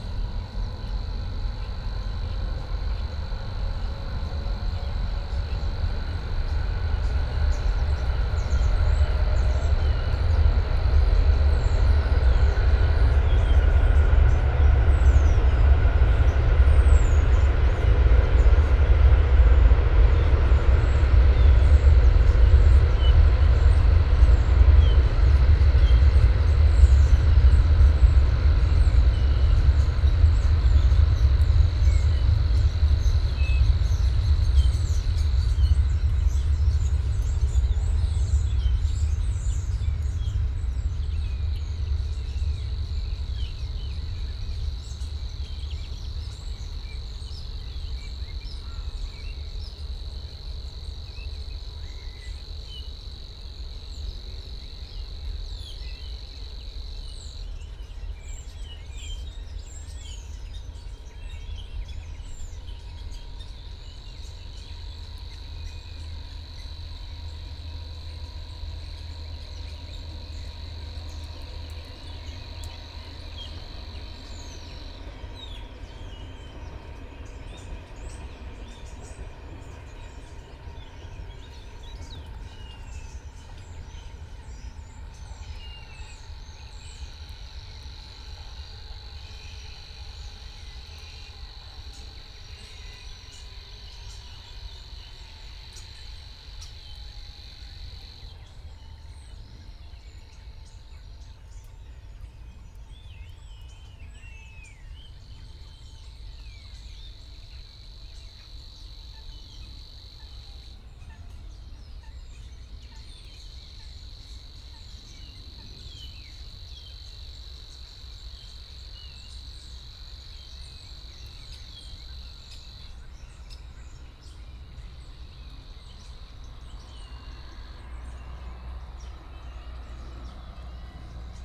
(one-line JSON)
{"title": "Moorlinse, Berlin-Buch, Deutschland - birds, trains and airplanes", "date": "2019-05-09 20:55:00", "description": "an amazing variety of birds at Moorlinse, a few Cranes (german: Kraniche) can be heard in the beginning. Starlings chatting and shitting above me... after a few minutes, a freight train is passing by, causing a heavy impact on the soundscape. Low frequency energy becomes physically perceptible\n(Sony PCM D50, DPA4060)", "latitude": "52.63", "longitude": "13.49", "altitude": "55", "timezone": "Europe/Berlin"}